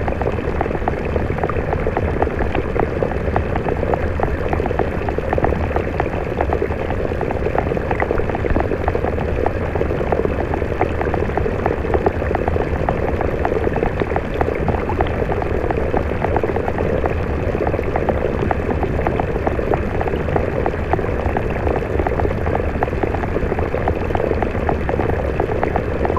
SBG, Gorg Negre - Torrent del Infern (hidro3)
Exploración con hidrófonos del torrente y la cascada.
Sobremunt, Spain, 20 July 2011, ~15:00